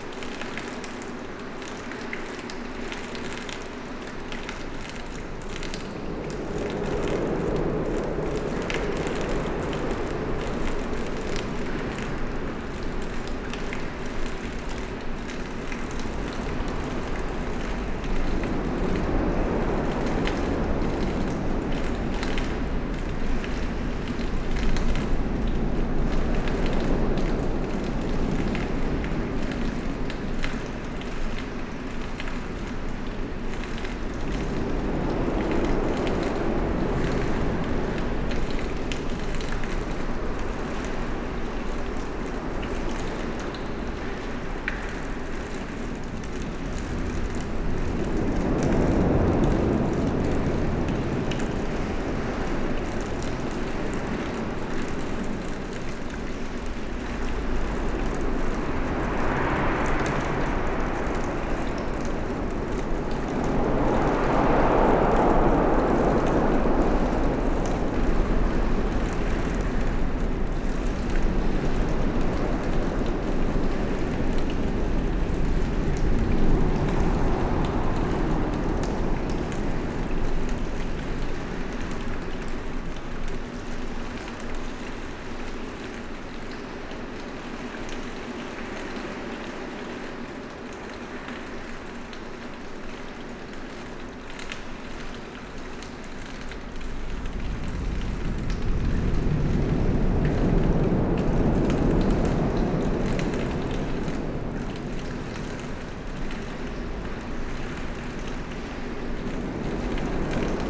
Dinant, Belgium - Charlemagne bridge
Inside the Charlemagne bridge, sound of the water collected in strange curved tubes. Water is flowing irregularly.
29 September 2017